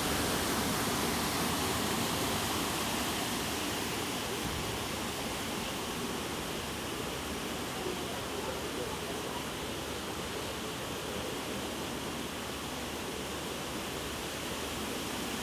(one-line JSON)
{"title": "La Louvière, Belgium - Lift for boat", "date": "2018-08-15 11:50:00", "description": "This is a lift for boats. It's working only with water and nothing with engines. Here, it's a walk around the lift, from the bottom to the top, a small boat is ascending the canal. The boat is called Ninenix and have no IMO number. Very windy day, bad weather and curious span effects because lifts are moving very huge quantities of moving water.", "latitude": "50.49", "longitude": "4.18", "altitude": "106", "timezone": "GMT+1"}